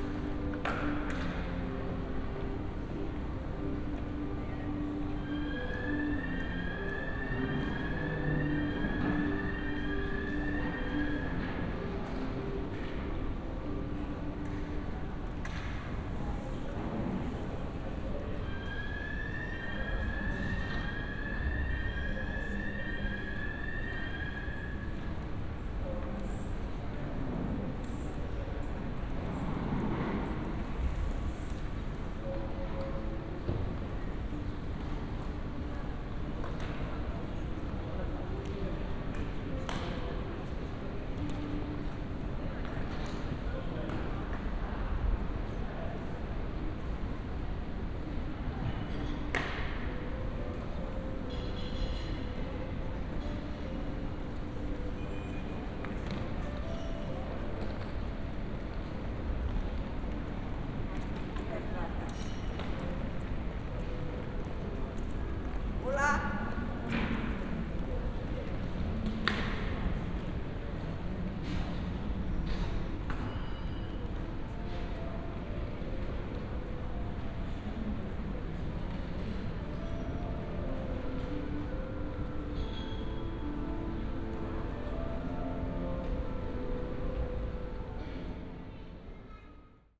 (binaural) ambience at newly opened departure hall at the Okecie airport. Recorded above check in stands form an mezzanine. Relaxing piano music stabbed by an alarm. a few passengers rushing towards security. (sony d50 + Luhd PM-01's).